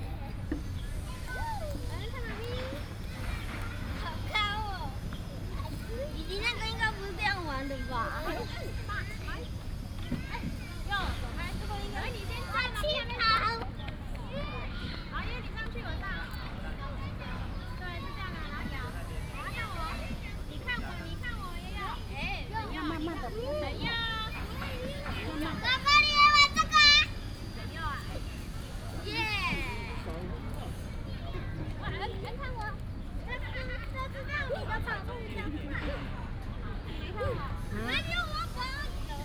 石雕公園, Banqiao Dist., New Taipei City - Children Playground
in the park, Children Playground, Bird calls
New Taipei City, Taiwan, 20 August 2015